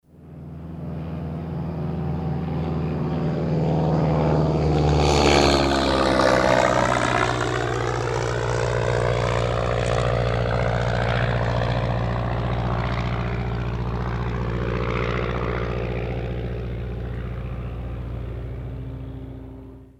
langenfeld-wiescheid, graf von mirbach weg - flugfeld
morgens, abflug eines einmotorigen sportflugzeuges
soundmap nrw: social ambiences/ listen to the people - in & outdoor nearfield recordings